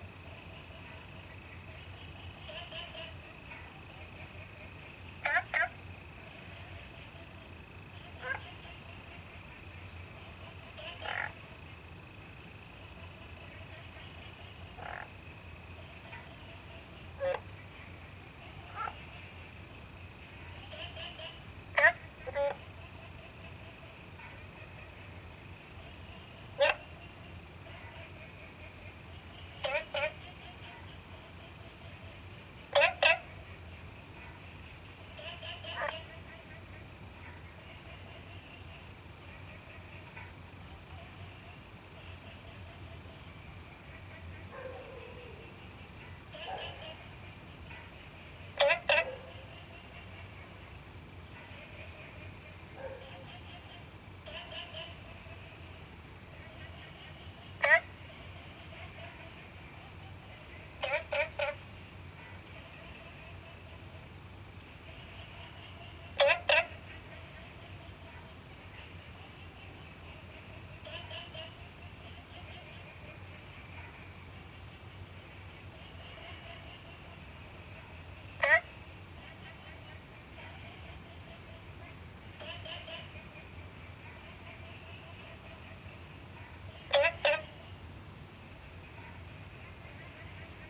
Frog calls, Small ecological pool
Zoom H2n MS+XY